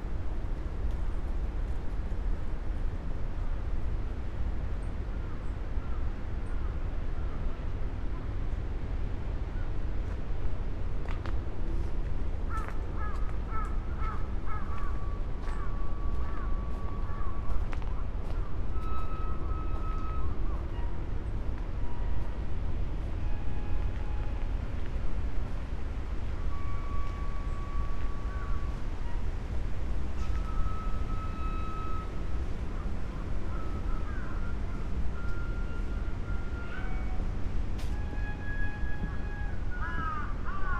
shinjuku gyoen gardens, tokyo - tinwhistler
Shinjuku, Tokyo, Japan